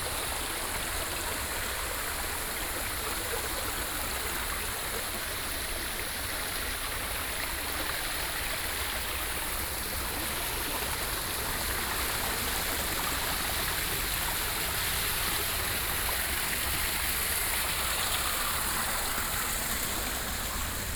Tianmu 天母水管路古道, Shilin District - Trail
Trail, Stream, Cicadas, Sony PCM D50 + Soundman OKM II
Taipei City, Taiwan